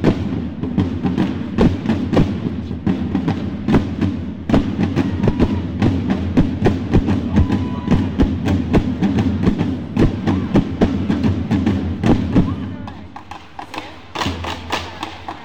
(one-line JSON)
{"title": "Feltre (Belluno) Italy", "date": "2010-08-16 23:01:00", "description": "Palio di Feltre (7 agosto 2010): tamburi e rullanti accompagnano gli sbandieratori delle contrade.", "latitude": "46.02", "longitude": "11.91", "timezone": "Europe/Berlin"}